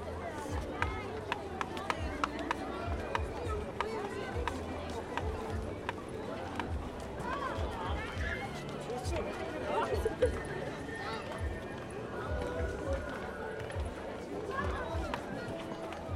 National amusement park, Ulaanbaatar, Mongolei - stairs in the amusementparc
children's day, opening of the amusement park, stairs from the cafe the entrance in the parc